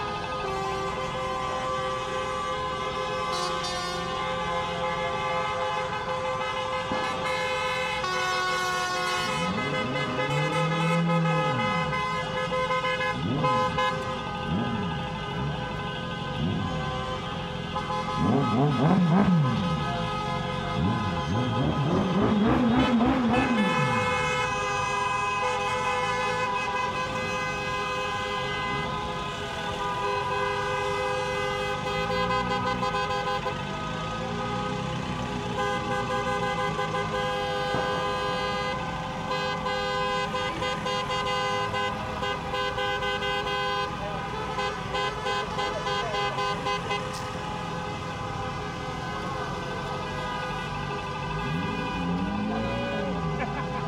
Bd Baudouin, Bruxelles, Belgique - European demonstration of Taxi drivers against Uber
Horns, klaxons.
Tech Note : Sony PCM-M10 internal microphones.
Brussel-Hoofdstad - Bruxelles-Capitale, Région de Bruxelles-Capitale - Brussels Hoofdstedelijk Gewest, België / Belgique / Belgien, 8 September 2022, 12:00